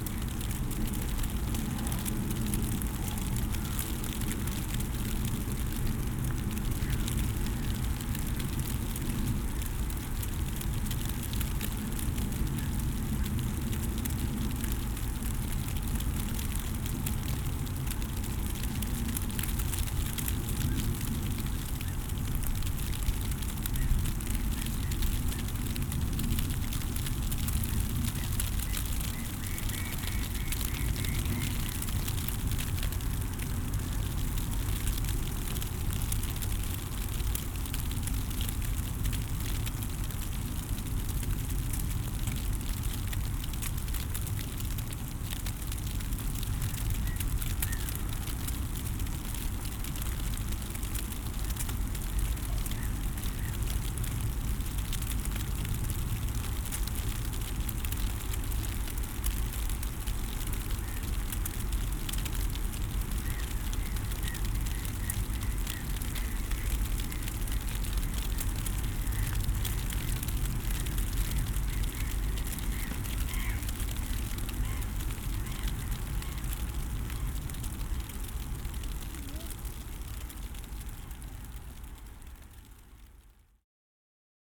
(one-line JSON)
{"title": "Utena, Lithuania, under the umbrella", "date": "2021-04-01 16:30:00", "description": "strangely, 1st April, snow is falling down and I standing under the umbrella with ambisonic headset listening to quarantine town...", "latitude": "55.51", "longitude": "25.59", "altitude": "113", "timezone": "Europe/Vilnius"}